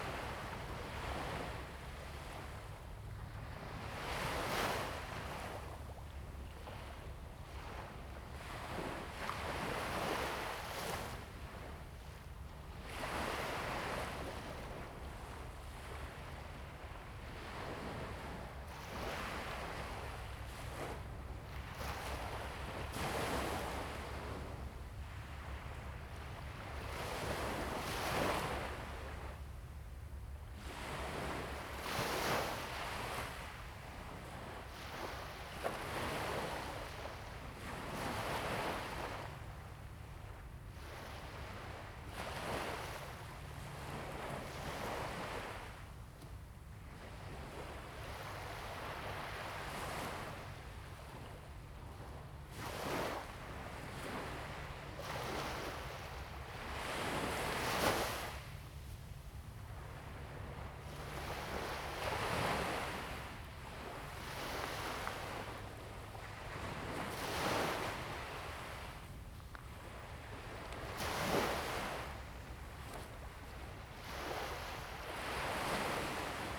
Jinning Township, Kinmen County - Sound of the waves
Sound of the waves
Zoom H2n MS+XY
金門縣 (Kinmen), 福建省 (Fujian), Mainland - Taiwan Border